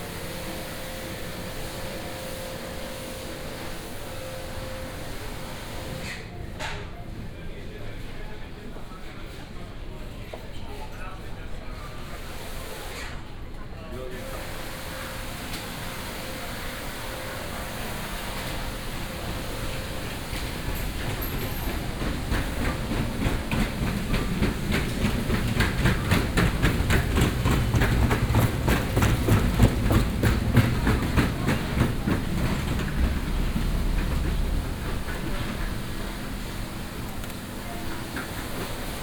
Magdalen Str., Oxford - supermarket, closing time
supermarket, near closing time, clean up, had to ask how the self service cash point works
(Sony D50, OKM2)
Oxford, Oxfordshire, UK